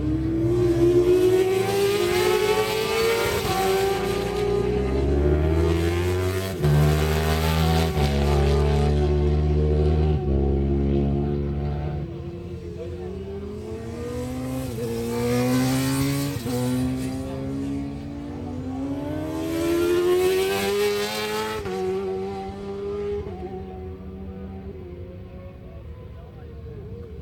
MCN Superbikes Qualifying ... Abbey ... Silverstone ... one point stereo mic to minidisk ... warm sunny day ...
Towcester, UK, 19 June 1999